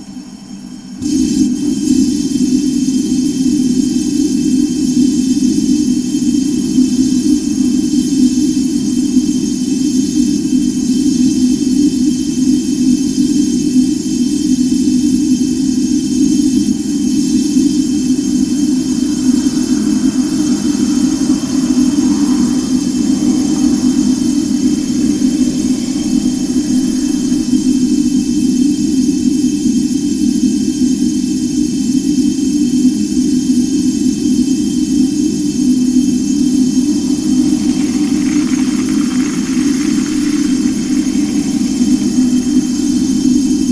Gėlių g., Ringaudai, Lithuania - Gasbox hum
A humming gasbox near a "Maxima" store. Mid-recording the hum becomes considerably louder as more gas is being drawn. Some background traffic sounds can also be heard. Recorded from a point-blank distance with ZOOM H5.
Kauno apskritis, Lietuva, 20 April